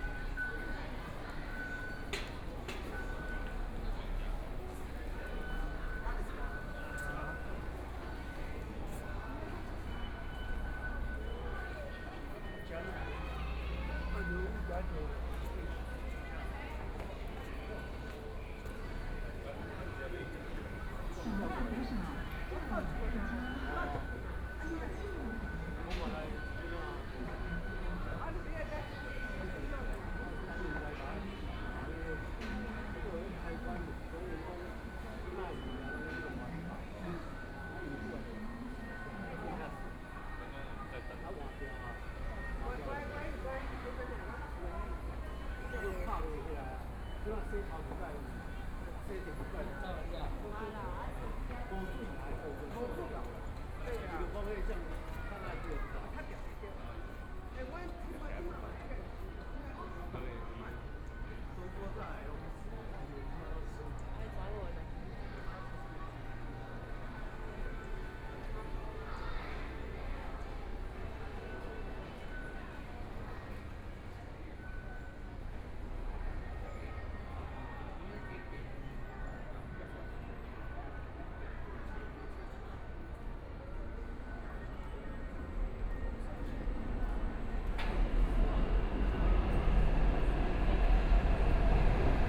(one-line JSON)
{"title": "Taipei EXPO Park, Taiwan - Soundwalk", "date": "2014-02-10 16:48:00", "description": "Follow the footsteps, Walking through the park, Environmental sounds, Traffic Sound, Aircraft flying through, Tourist, Clammy cloudy, Binaural recordings, Zoom H4n+ Soundman OKM II", "latitude": "25.07", "longitude": "121.52", "timezone": "Asia/Taipei"}